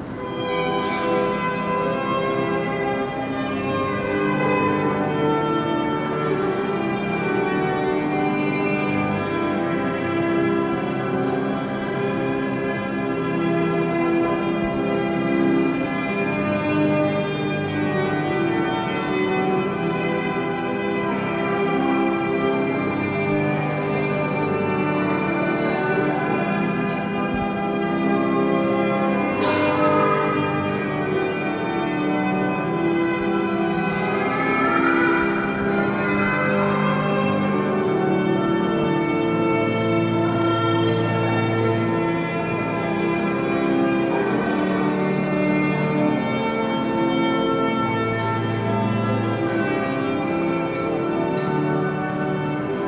Altamura BA, Italie - a wedding ceremony
a wedding ceremony in the cathedral of Altamura